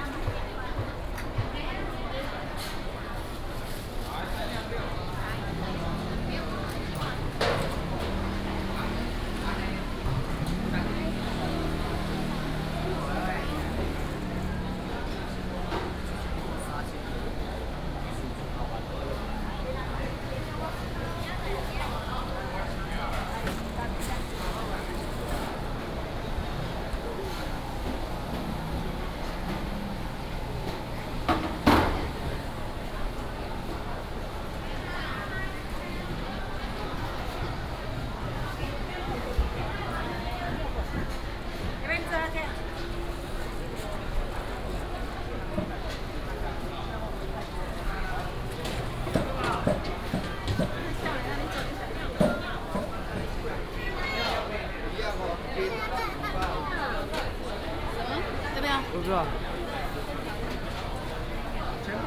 Walking in the market, vendors peddling, Binaural recordings, Sony PCM D100+ Soundman OKM II